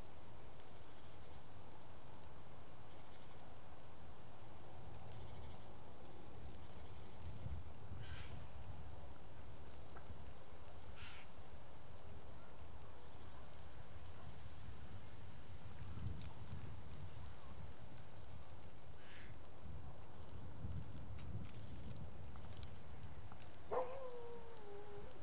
Suchy Las, road near allotments - plastic envelope in the air
a piece of plastic attached to the fence, fluttering in the wind.